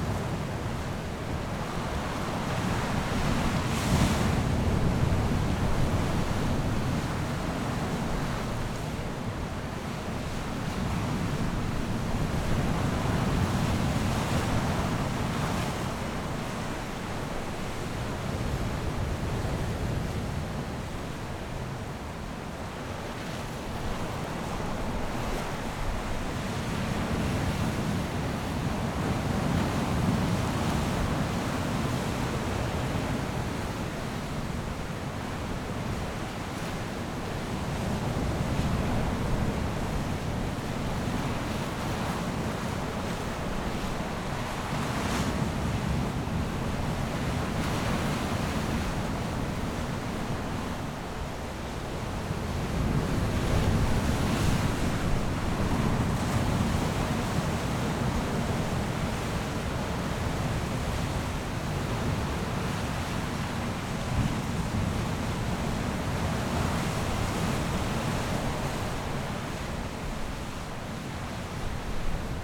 坦克岩, Jizazalay - Beside a large rock area
Beside a large rock area, sound of the waves
Zoom H6 +Rode NT4